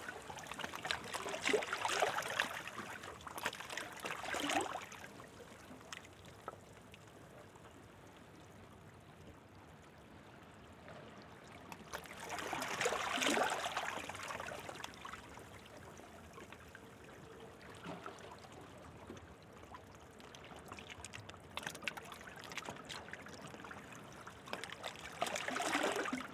Gökbel/Ortaca/Muğla, Turkey - Gentle waves in the rocks
Sheltered from the prevailing waves, water calmly ebbs and flows between the rocks.
(Recorded w/ AT BP4025 on SD633)